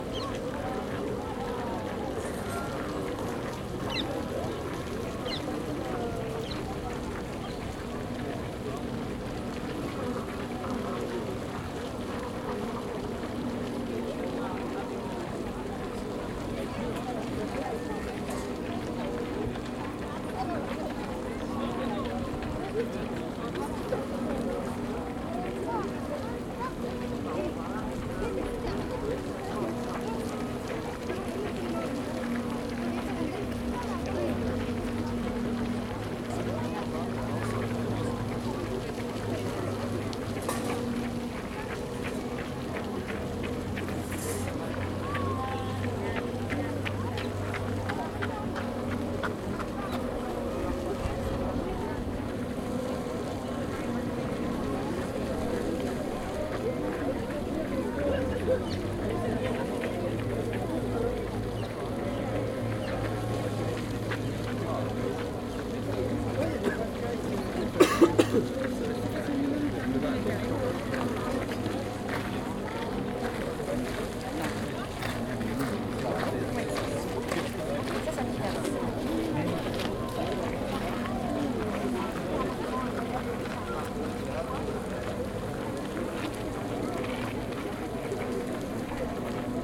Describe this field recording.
A bench close to the fountain, bells on the background, joggers on the gravel